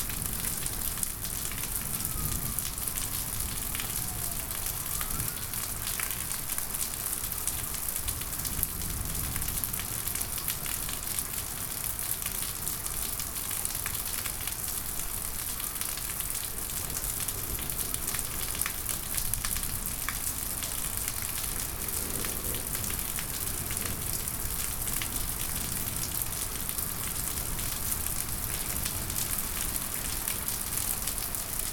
Eastside, Milwaukee, WI, USA - thunderstorm, WLD 2015